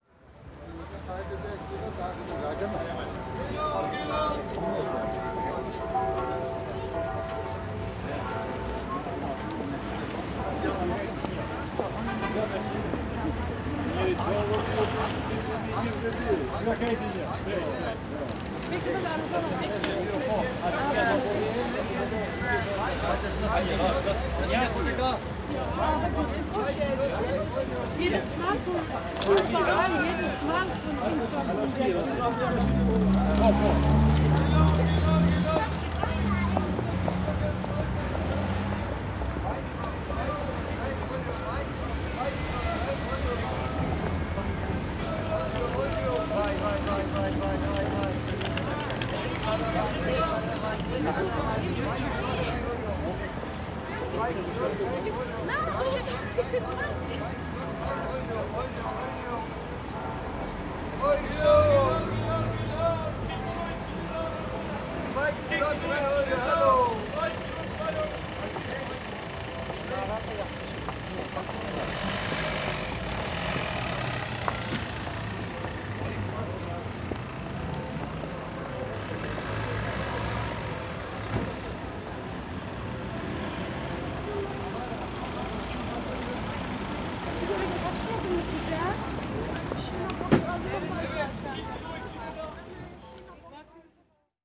Badstraße, Berlin - shop keeper shouting, church bells ringing. In front of a Turkish supermarket. [I used the Hi-MD-recorder Sony MZ-NH900 with external microphone Beyerdynamic MCE 82]
Berlin, Germany